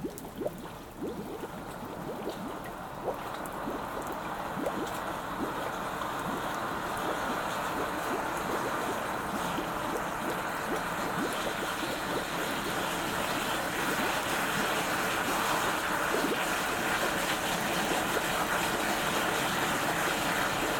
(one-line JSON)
{"title": "Utena, Lithuania, after the rain", "date": "2022-08-06 14:15:00", "description": "Heavy rain is over. Some gurgling waters in the grass, probably rain-well...like previous recording, this is done with Sennheaiser ambeo headset", "latitude": "55.50", "longitude": "25.59", "altitude": "107", "timezone": "Europe/Vilnius"}